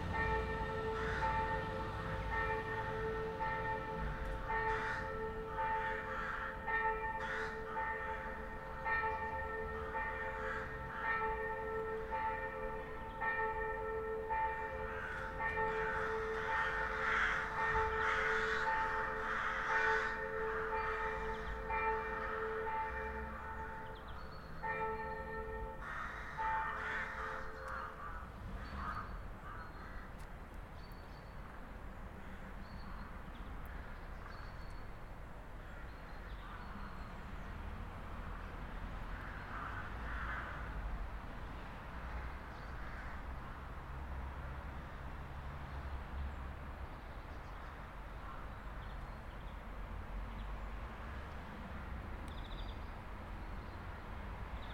{"title": "Rue Francq, Arlon, België - Saint-Martin Church Bells", "date": "2019-02-11 12:05:00", "description": "Crows and church bells of the église Saint Martin, as heard from the side of a little park. Bus and car passing by.", "latitude": "49.68", "longitude": "5.81", "altitude": "401", "timezone": "GMT+1"}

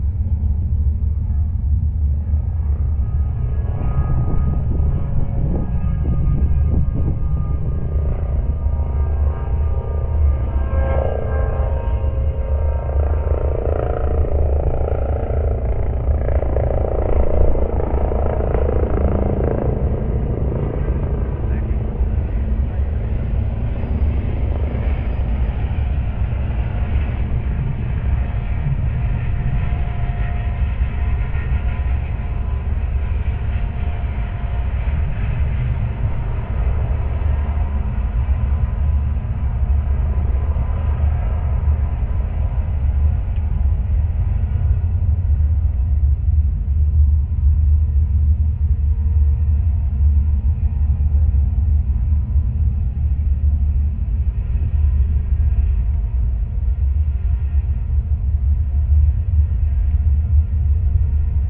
{"title": "SBG, Serra del Oratori - poste alta tensión", "date": "2011-08-27 11:30:00", "description": "La acción del viento y un helicóptero que sobrevuela muy cerca, escuchados a través de un poste de alta tensión situado en lo alto de la sierra.", "latitude": "41.98", "longitude": "2.18", "altitude": "882", "timezone": "Europe/Madrid"}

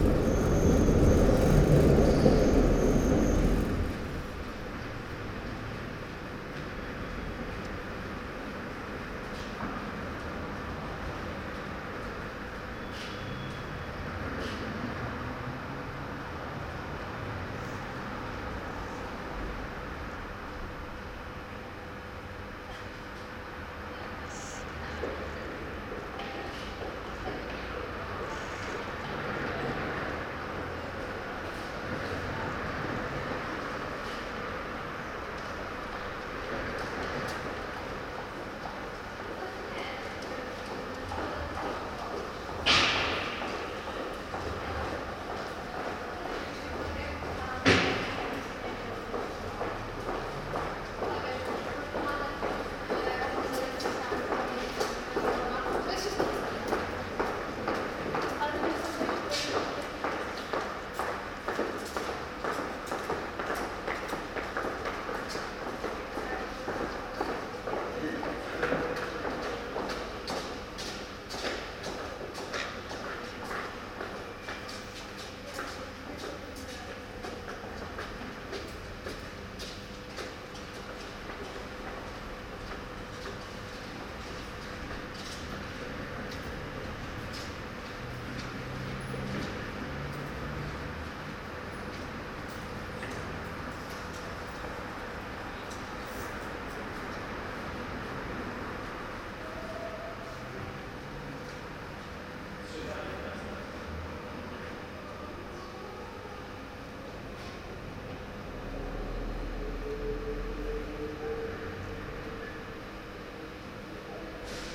{"title": "przejscie podziemne, ul. Kilinskiego, Lodz", "date": "2011-11-17 12:20:00", "description": "autor / author: Lukasz Cieslak", "latitude": "51.77", "longitude": "19.46", "altitude": "213", "timezone": "Europe/Warsaw"}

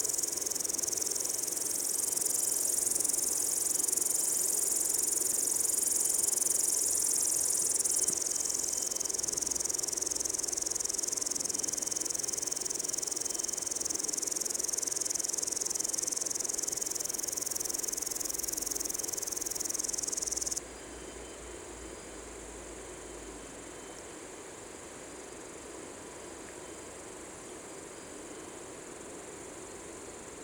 {"title": "Hinterthal, Austria - Dusk insects, cows and cars", "date": "2015-07-21 19:00:00", "description": "In a meadow above the town of Hinterthal. Nice insects, cowbells, and at the end some passing cars on the road below. Telinga stereo parabolic mic with Tascam DR-680mkII recorder.", "latitude": "47.40", "longitude": "12.98", "altitude": "1028", "timezone": "Europe/Vienna"}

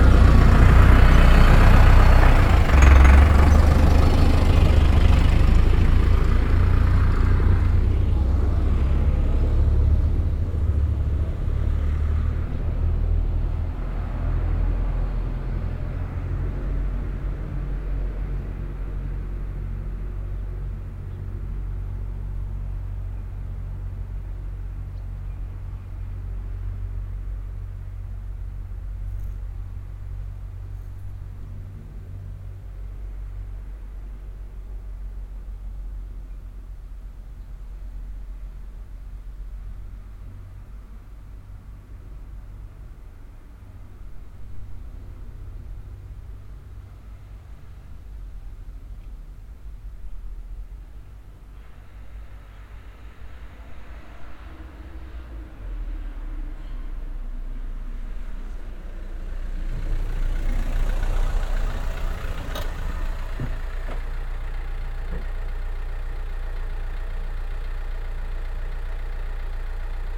{"title": "hupperdange, tractor and post car", "date": "2011-09-13 17:13:00", "description": "On the street. The sound of a tractor passing by and vanishing in the silence of the village. Then a post car arrives and stands with running engine while the postman empties the mail. Finally the car drives away.\nHupperdange, Traktor und Postauto\nAuf der Straße. Das Geräusch von einem Traktor, der vorbeifährt und in der Stille des Tals verschwindet. Dann kommt ein Postauto und bleibt mit laufendem Motor stehen, während der Postbote den Briefkasten leert. Schließlich fährt das Auto davon.\nHupperdange, tracteur et camionnette des postes\nSur la route. Le bruit d’un tracteur qui passe et disparait dans le silence du village. Puis une camionnette des postes arrive et s’arrête moteur allumé pendant que le facteur vide la boite aux lettres. Enfin, la camionnette redémarre.", "latitude": "50.09", "longitude": "6.06", "timezone": "Europe/Luxembourg"}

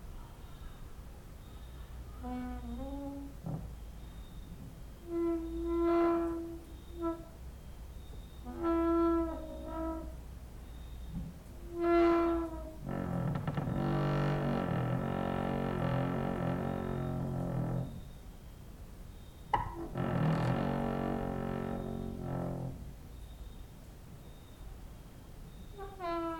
{"title": "Mladinska, Maribor, Slovenia - late night creaky lullaby for cricket/16", "date": "2012-08-25 22:57:00", "description": "cricket outside, exercising creaking with wooden doors inside", "latitude": "46.56", "longitude": "15.65", "altitude": "285", "timezone": "Europe/Ljubljana"}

